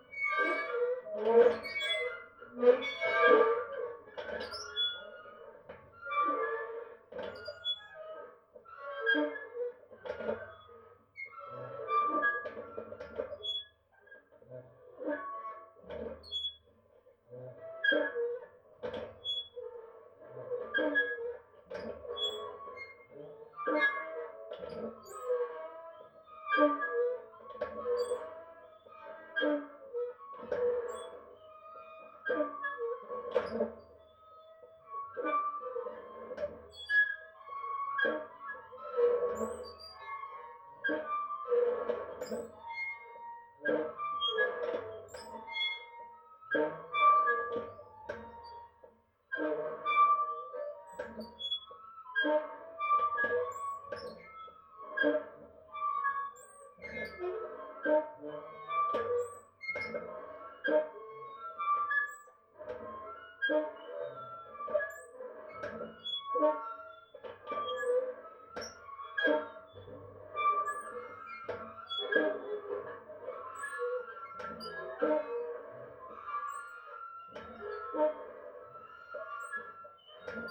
Plaza Simon Bolivar, Valparaíso, Chile - playground swings, contact mic
swings recorded with a stereo contact microphone attached to the metal structure
Región de Valparaíso, Chile, 30 November 2015